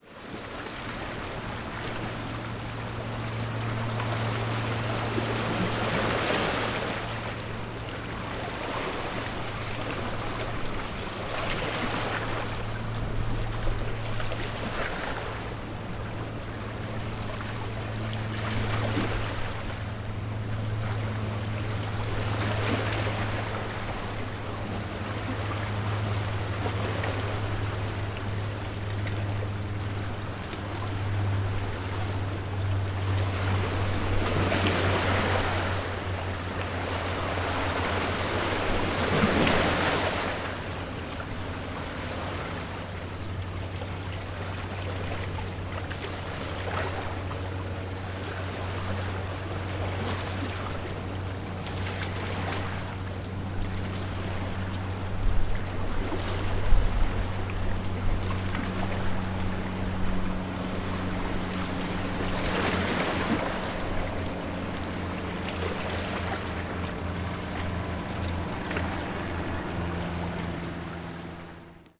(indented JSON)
{
  "title": "Bluffers Park, Scarborough, ON, Canada - Waves of Lake Ontario 1",
  "date": "2019-08-15 15:07:00",
  "description": "Waves breaking against rocks placed to prevent shoreline erosion.",
  "latitude": "43.71",
  "longitude": "-79.23",
  "timezone": "GMT+1"
}